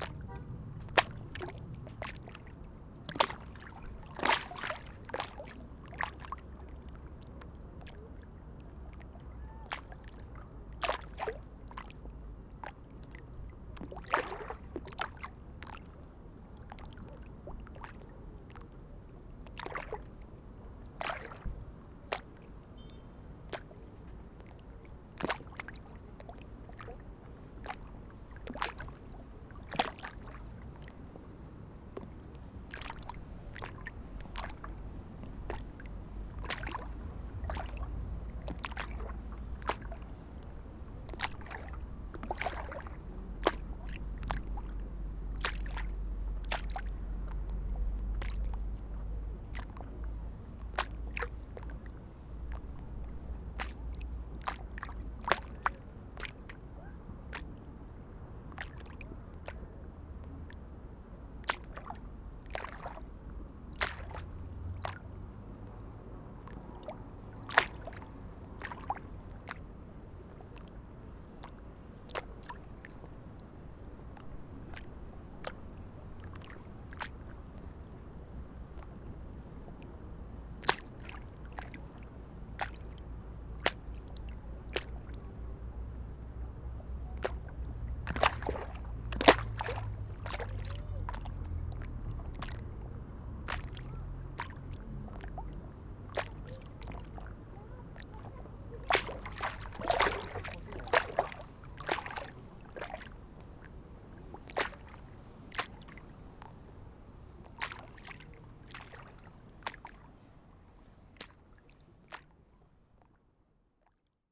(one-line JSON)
{"title": "Rixensart, Belgique - Waves on the lake", "date": "2016-09-04 15:50:00", "description": "There's wind on this sunday afternoon. On the Genval lake, small waves crash on the border.", "latitude": "50.73", "longitude": "4.52", "timezone": "Europe/Brussels"}